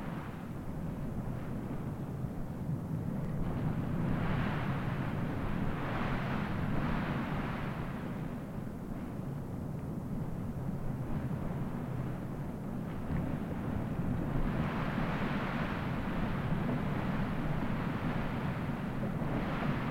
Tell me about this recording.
hydrophones buried in the sand of dunes